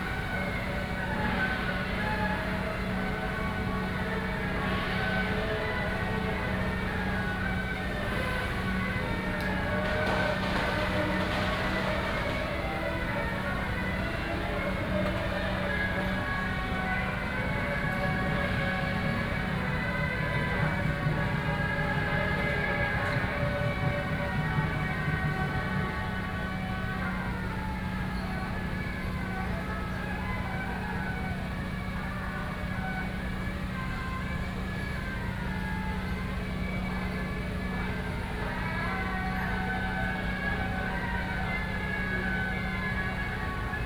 Daren St., Tamsui Dist. - temple fair
temple fair, “Din Tao”ßLeader of the parade